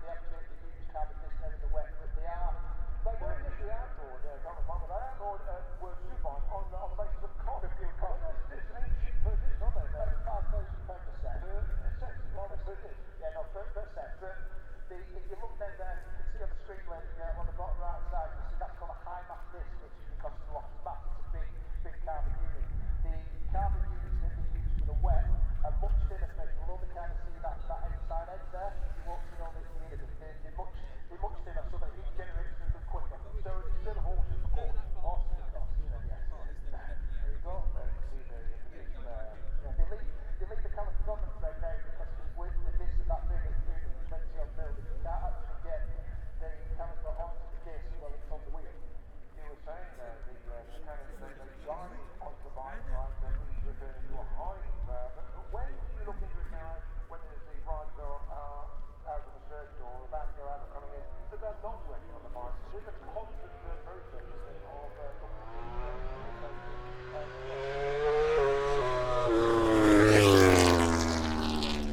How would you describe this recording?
British Motorcycle Grand Prix 2018 ... moto grand prix ... free practice four ... maggotts ... lavalier mics clipped to baseball clap ...